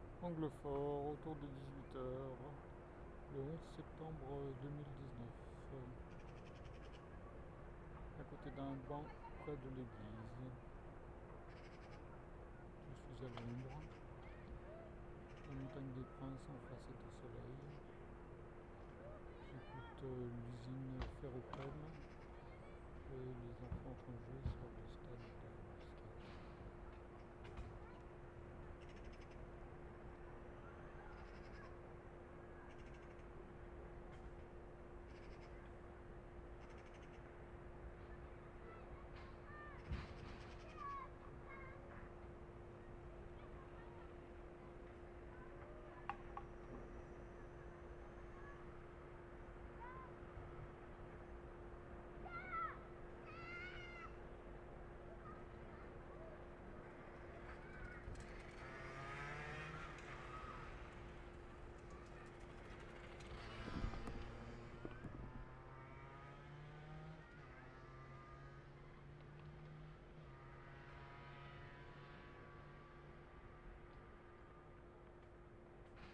Sur un banc près de l'église d'Anglefort sonnerie de 18h, au loin un stade de skateboard et l'usine Ferropem .
France métropolitaine, France, 11 September 2019, 18:00